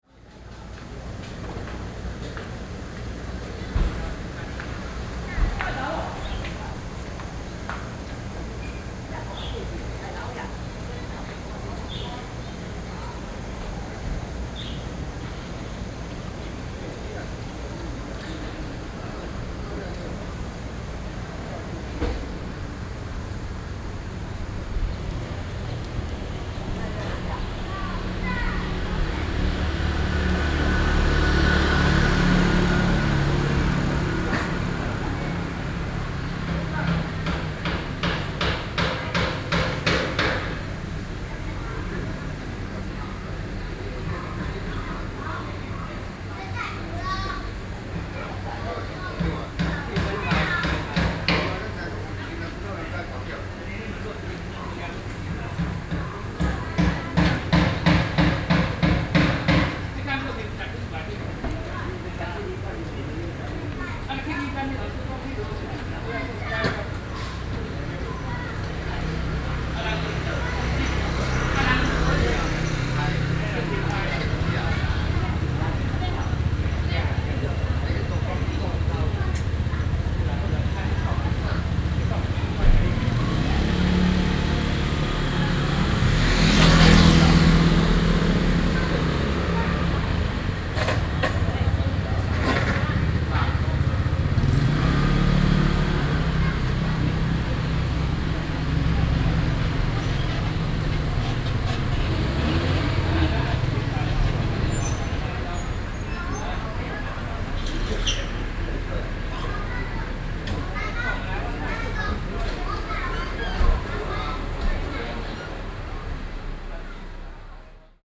Shuangxi, New Taipei City - Small towns
A group of the old man sitting in the intersection chat, Sony PCM D50 + Soundman OKM II